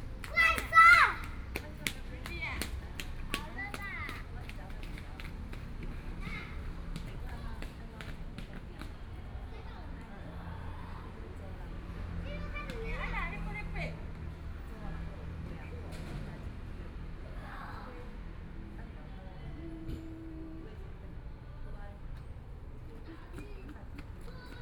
DeHui Park, Taipei City - Child
The elderly and children, Children in the play area, in the Park
Please turn up the volume a little
Binaural recordings, Sony PCM D100 + Soundman OKM II